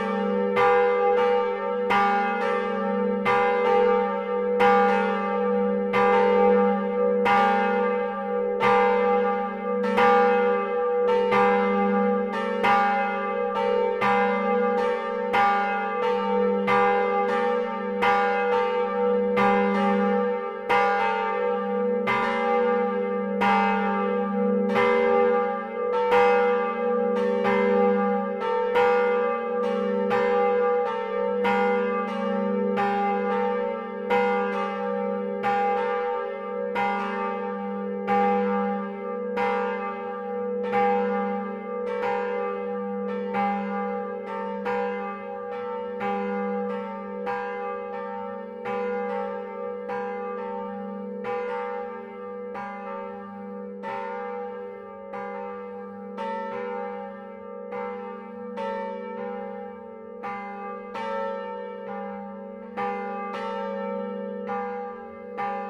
Mont-Saint-Guibert, Belgique - The bells
The two bells of the church, recorded inside the tower. These two bells are mediocre, the bellfounder made only these two ones.